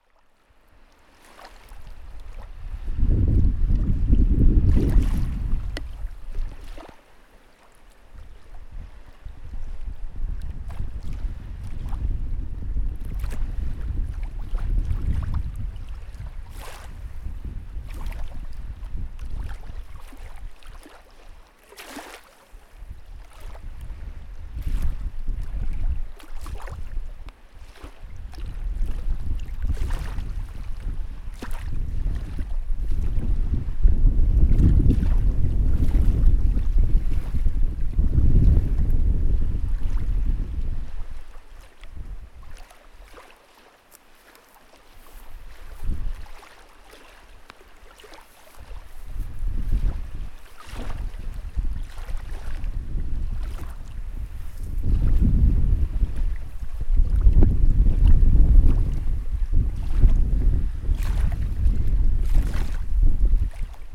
Most Kolejowy, Nadbrzeżna, Gorzów Wielkopolski, Polska - North riverside of Warta under the railway bride.
North riverside of Warta under the railway bride. The recording comes from a sound walk around the railway locations. Sound captured with ZOOM H1.